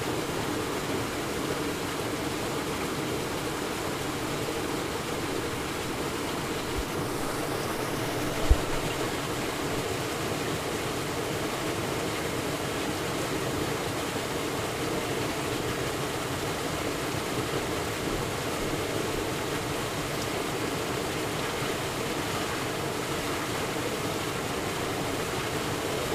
Trehörningsjö, utanför vattenkvarnen - Outside the watermill
Outside the old watermills inlet of the waterstream. Recording from soundwalk during World Listening Day, 18th july 2010.